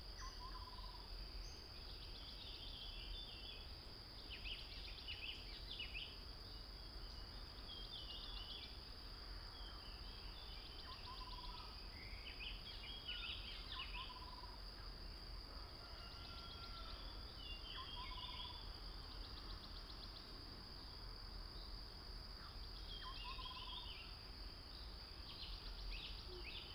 水上巷, 桃米里 Puli Township - In the morning
In the morning, Chicken sounds, Bird sounds
21 April 2016